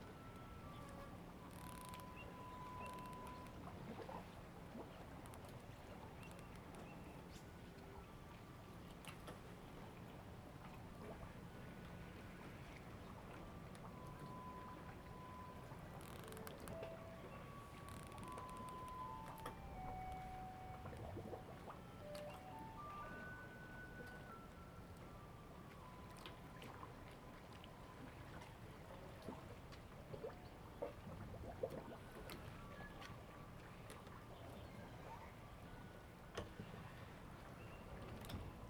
At the fishing port, Sound of the Tide, Birds sound, traffic sound, Garbage truck music sound
Zoom H2n MS+XY